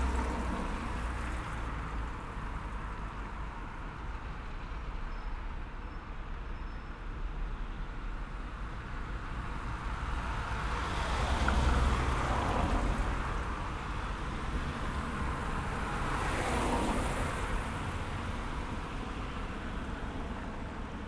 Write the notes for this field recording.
DPA 4061 microphones attached to the backbag, recorded while walking. Starts from room, going outsides, on streets and entering restaurant, joining others around table.